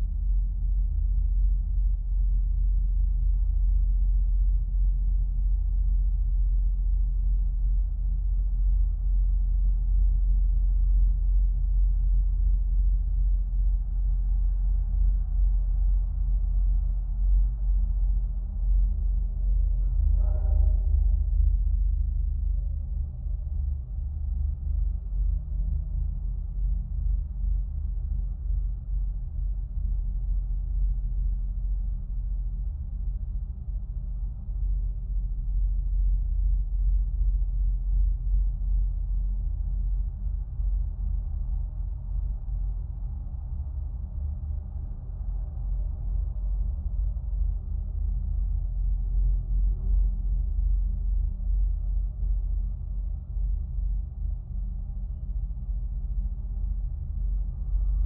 Morningside Heights - Fire Escape
Contact microphone on an 8th-floor steel fire escape.
Manhattan, NYC.
United States